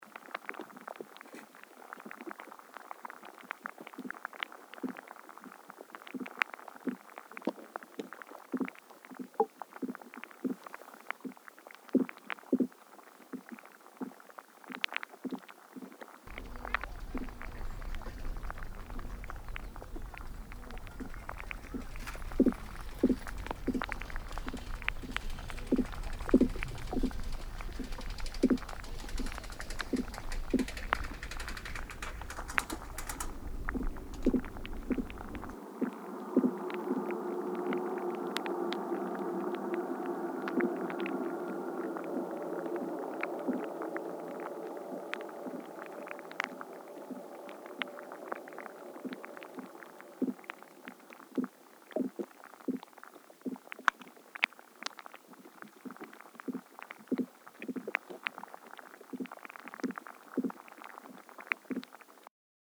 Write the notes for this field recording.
hydrophones & stereo microphone